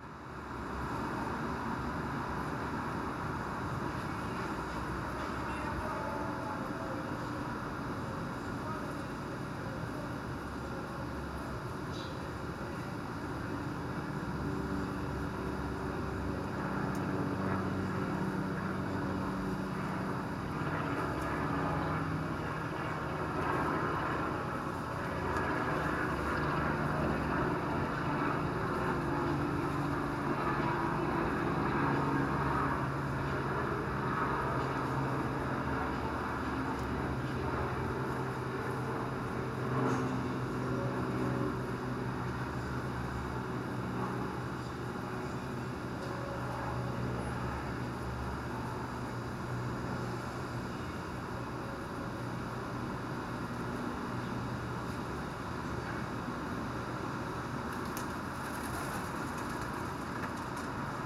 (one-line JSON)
{"title": "Rue Saint-Urbain, Montréal, QC, Canada - Quieter street, Heli and pigeons", "date": "2021-08-18 17:09:00", "description": "st Urbain St, Zoom MH-6 and Nw-410 Stereo XY", "latitude": "45.52", "longitude": "-73.59", "altitude": "67", "timezone": "America/Toronto"}